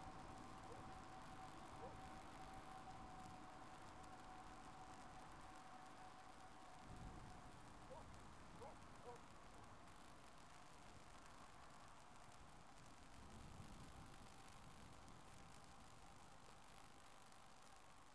snow is falling on the crackling high voltage lines...tractor passing by my recorder...
21 February 2018, ~15:00